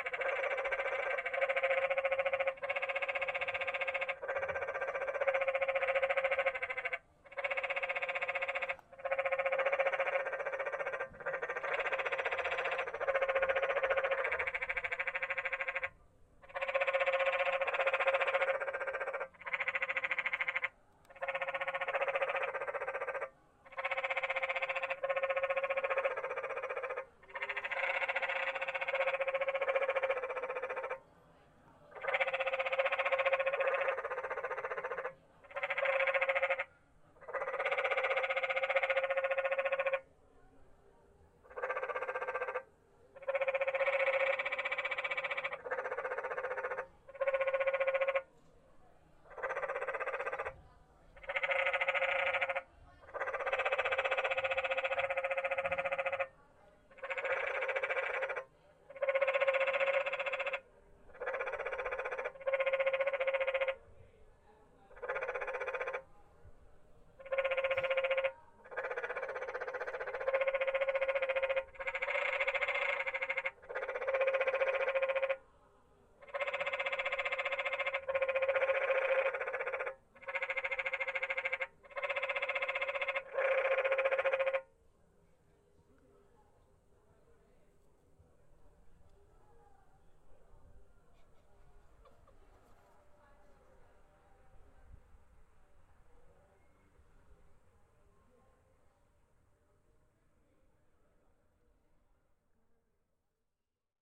Arcosanti, AZ - Arcosanti's Frogs
This recording was made at Arcosanti, a project by Italian architect Paolo Soleri.
The frogs were inside a cement structure that I initially mistook for a trash can. Later I came to realize that the structure was housing the frogs and was itself an angular futurist rendition of a frog.
From Wikipedia: Arcosanti is an experimental town and molten bronze bell casting community in Yavapai County, central Arizona, 70 mi north of Phoenix, at an elevation of 3,732 feet.